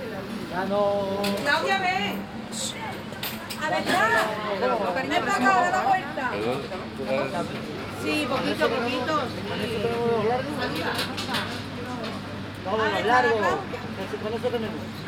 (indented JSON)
{
  "title": "Sevilla, Provinz Sevilla, Spanien - Sevilla - fritteria",
  "date": "2016-10-10 09:00:00",
  "description": "At a spanish fritteria kiosk in the morning. The sound of people talking and ordering freshly fried goods.\ninternational city sounds - topographic field recordings and social ambiences",
  "latitude": "37.40",
  "longitude": "-5.99",
  "altitude": "9",
  "timezone": "Europe/Madrid"
}